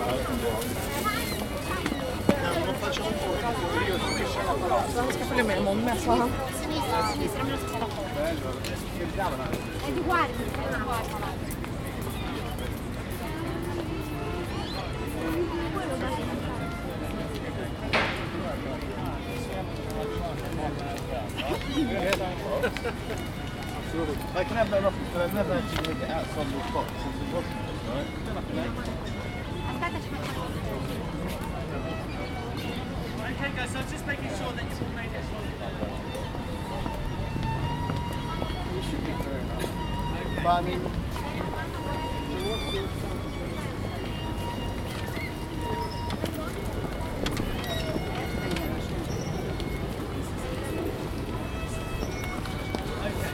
St. James's Park, London. - Tourists and musician on The Blue Bridge.
This is the first time I have ever heard a busker on The Blue Bridge. A violinist. Also, bird sounds and many tourists. A hand held recording on a Zoom H2n with no wind shield.